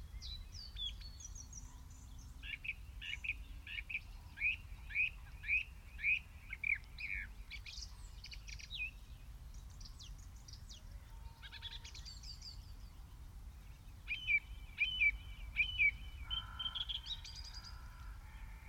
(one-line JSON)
{"title": "Streaming from a hedgerow in large intensively farmed fields near Halesworth, UK - Dusk songthrushes sing and fly very close in the falling light", "date": "2021-05-26 21:15:00", "description": "These fields are huge and farmed industrially. A few hedgerows remain and are home for more birds than I expected. A song thrush sings loudly from the one tall tree but takes to the wing to chase off a rival. The birds' flight and fluttering movement ruffling close to the microphones on occasion - a quick but intense encounter. Wood pigeons call, crows, pheasants and skylarks are the background. An early owl hoots in the far distance. Someone is shooting - no idea at what. Shots and bird scarers are a constant in rural Suffolk. Given the agri-chemical onslaught on these fields I guess the, at times, war zone soundscape is fitting.", "latitude": "52.35", "longitude": "1.49", "altitude": "23", "timezone": "Europe/London"}